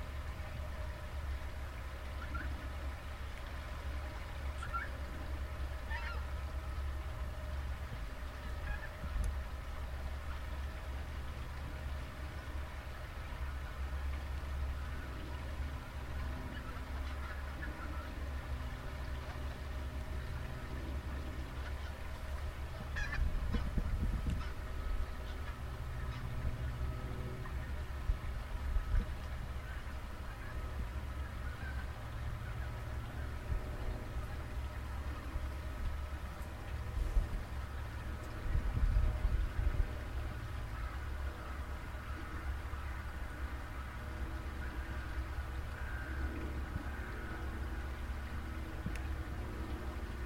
Ganzenveld, aeroplane, boat, resonances inside observation post.
Zoom H1.
Bronckhorst, Gelderland, Nederland, January 2021